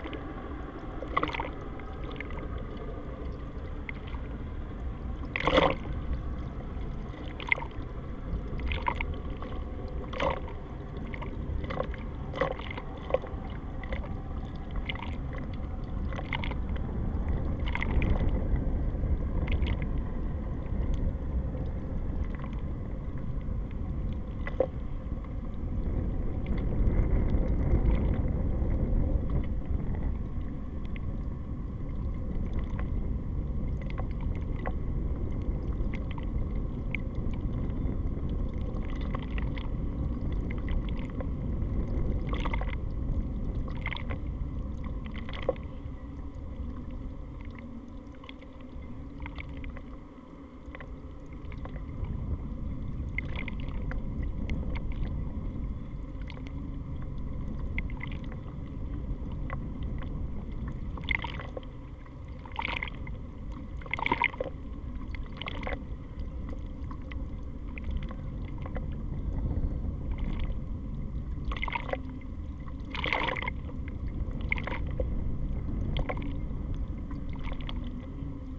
Sopot, Poland, contact with the pier
contact microphones on wooden constructions of pier.
14 August 2014, ~14:00